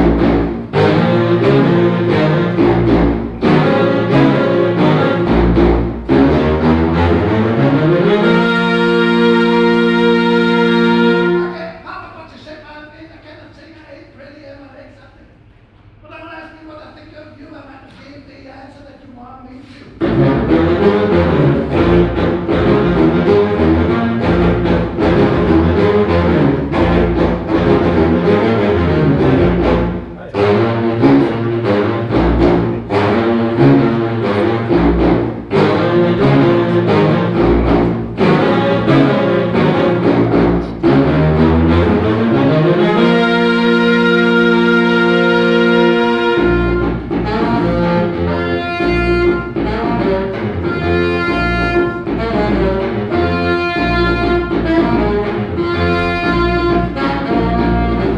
Im Konzert Saal der Zeche Carl. Der Klang des Basssaxophon Quartetts Deep Schrott bei einem Auftritt mit Applaus.
Inseide the concert hall of the venue Zeche Carl. The sound of the bass saxophone quartet Deep Schrott and applause.
Projekt - Stadtklang//: Hörorte - topographic field recordings and social ambiences
Essen, Germany, 10 May 2014